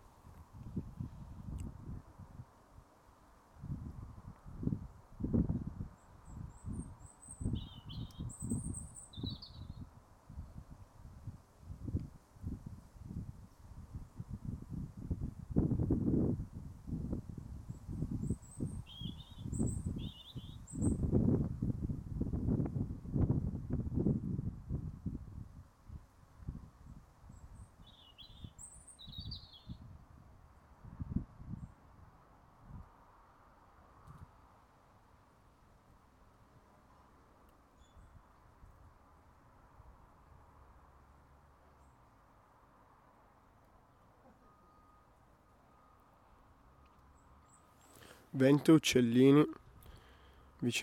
Pairc Nan Craobh, Isle of Skye, Regno Unito - Wind and Birds in Skye.
Quiet morning in the Isle of Skye. The birds chirp in funny ways, the wins blows slower than the storm of the day before. Rec with Tascam DR-05.